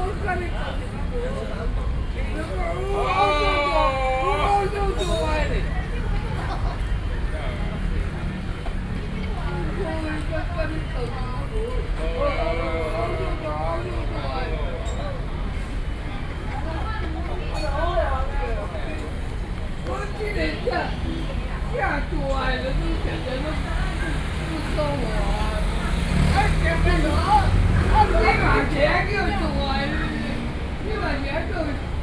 No., Section, Míngdēng Rd, New Taipei City - Drinking
13 November 2012, 18:02